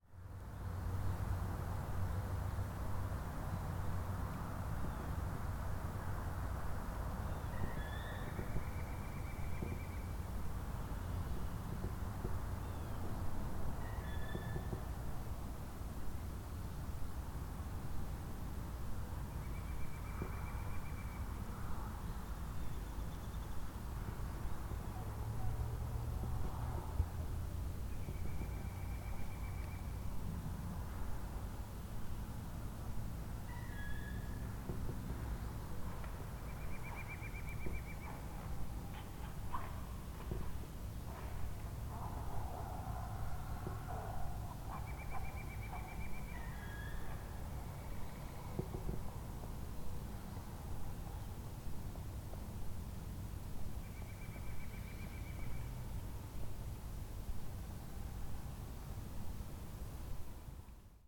River sounds off the paved bike trail, Ouabache State Park, Bluffton, IN
13 April 2019, 18:30